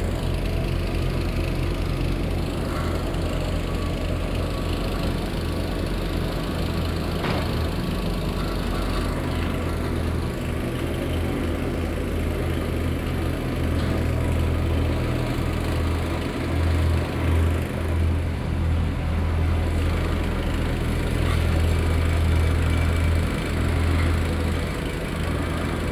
Fuxinggang, Taipei - Construction noise
Construction noise, Being filled and paved road, Binaural recordings, Sony PCM D50 + Soundman OKM II
Taipei City, Taiwan, October 2013